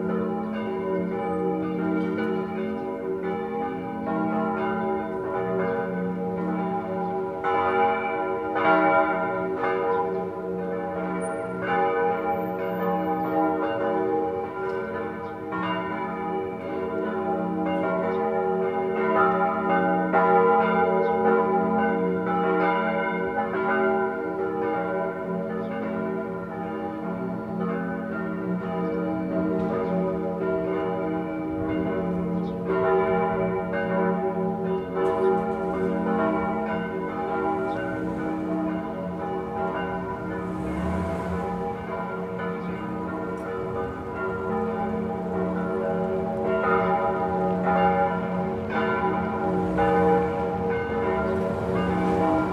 Reuterstrasse: Balcony Recordings of Public Actions - Attempt at Ode to Joy in the neighborhood
Sunday, March 22,2020, 6 pm - there was a call to perform "Ode to Joy" together, from the balconies and window, in Corona times. I was curious to hear whether it would happen.
It didn't. Not here at least. The church bells were there, as usual on a Sunday evening. The first bell got nicely mixed with two kids on their way home playing with a basket ball.
One short attempt on an accordion.
In times of closed EU borders, refugees kept outside, in camps, it would be better, as someone suggested, to perform The International, or whatever, but not the European hymn. imho
Recorded on a Sony PCM D100 from my balcony again.